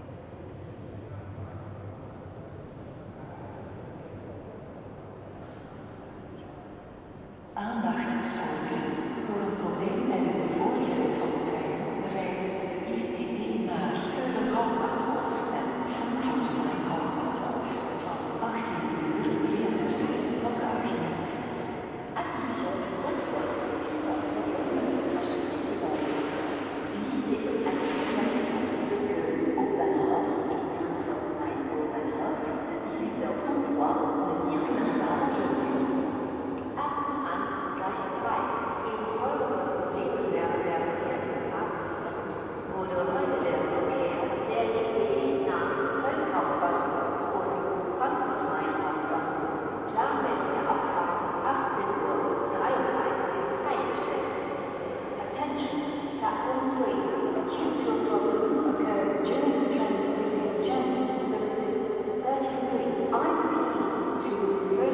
Schaerbeek, Belgium - Announcements melting in the main station at Brussels North
The huge vaulted ceiling in Brussels North resonates with all the announcements, and they melt in a way that reverberates the whole space.
Région de Bruxelles-Capitale - Brussels Hoofdstedelijk Gewest, België - Belgique - Belgien, European Union, 19 June 2013, 18:20